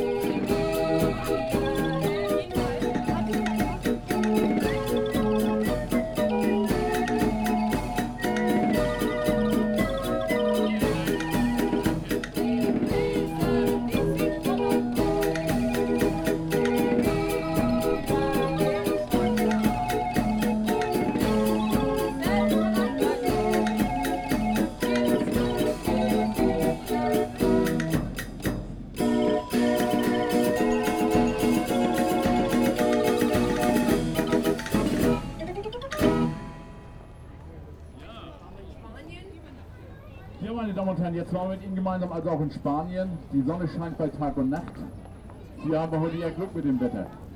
neoscenes: solid-state hurdy-gurdy